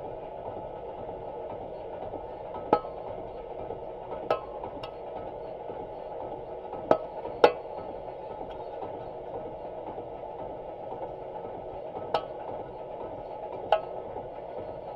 Necker, Paris, France - Paris Montparnasse station
Recording of an escalator with a contact microphone. This is the war inside this banal object !
July 2016